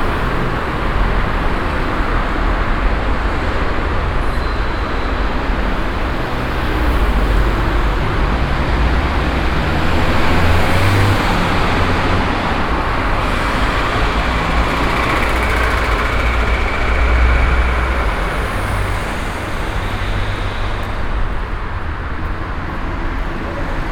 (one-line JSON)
{"title": "cologne, kalker hauptstraße, traffic under bridge", "date": "2009-06-25 13:48:00", "description": "soundmap nrw: social ambiences/ listen to the people in & outdoor topographic field recordings", "latitude": "50.94", "longitude": "7.02", "altitude": "50", "timezone": "Europe/Berlin"}